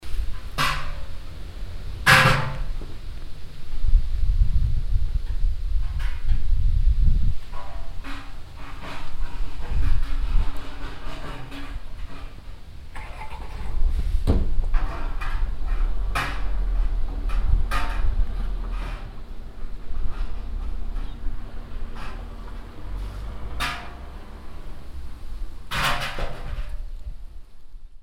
roder, barn yard, milk charn
At a barn yard. A short recording of the sound of milk charns in the cow shed. Unfortunately some wind blows and a car passing by.
Roder, Bauernhof, Milchkannen
Auf einem Bauernhof. Eine kurze Aufnahme von dem Geräusch von Milchkannen in einem Kuhstall. Leider bläst ein frischer Wind und ein Auto fährt vorbei.
Roder, basse-cour, bidon à lait
Dans une basse-cour. Un court enregistrement du son des bidons à lait dans l’étable à vaches. Malheureusement, le vent souffle et une voiture passe.
Luxembourg